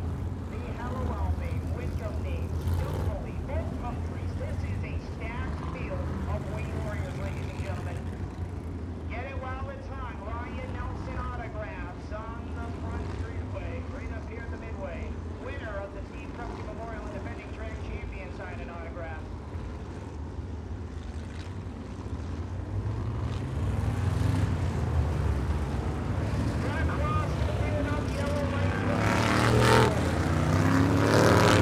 Hudson Speedway - Supermodified Feature Race
The feature race for the 18 SMAC 350 Supermodifieds. Since they have to be push started it takes awhile for the race to actually start.